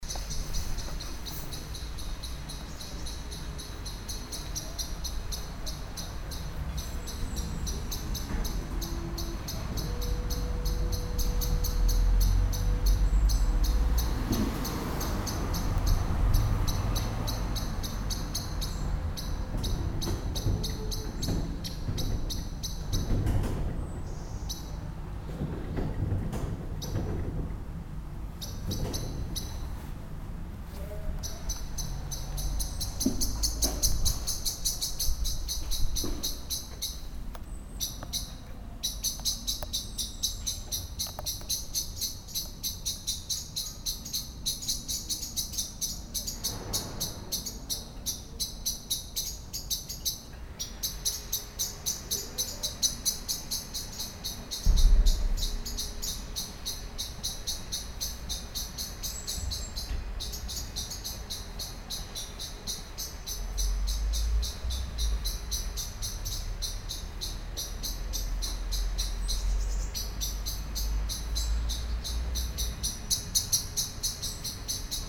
cologne, lütticherstrasse, amsel
amsel aufgeregt schimpfend fliegt von baum zu baum, im hintergrund fahrradfahrer und fussgänger auf dem bürgersteig, morgens
soundmap nrw: social ambiences/ listen to the people - in & outdoor nearfield recordings